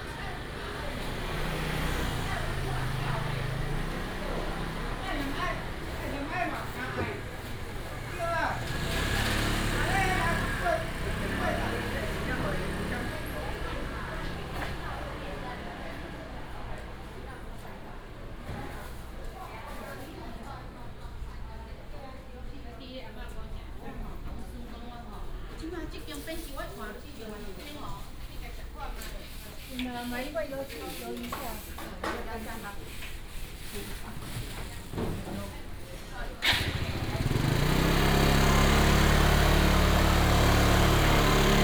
新竹中央市場, Hsinchu City - Walking in the traditional market
Walking in the traditional market inside